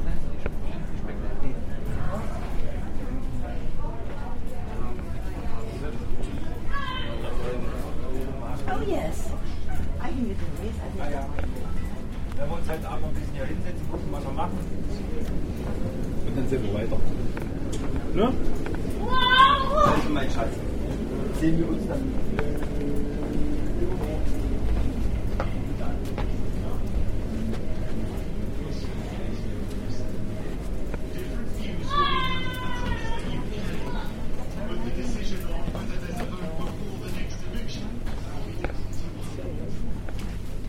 Irish Ferries, Ireland - The Sunken Hum Broadcast 279 - Ferry to France - 6 October 2013
Taking the ferry from Rosslare to Cherbourg.